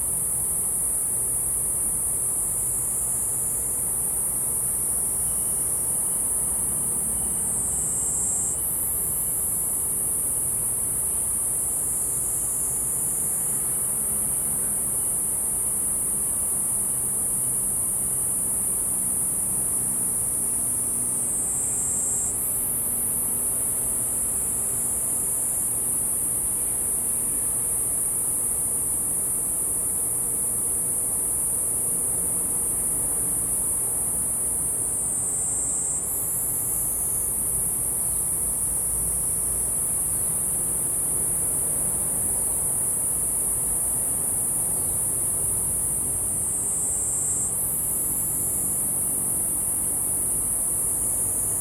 走 ‧ 讀桃米 人文空間, Taomi Ln., Puli Township - Insect sounds
Insect sounds
Zoom H2n MS+XY